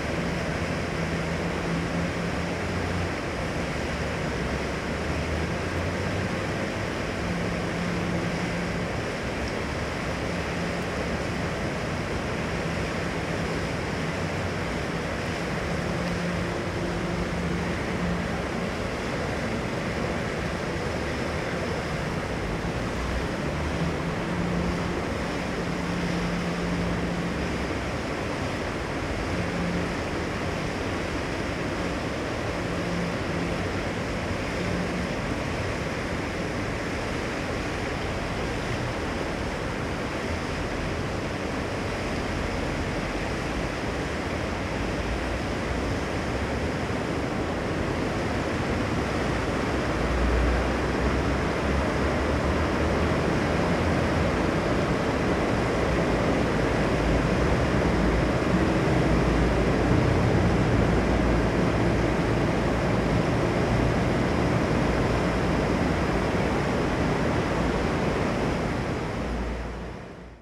Wienfluss reflection, Vienna
water sound of the Wienfluss canal reflecting off a concrete overhang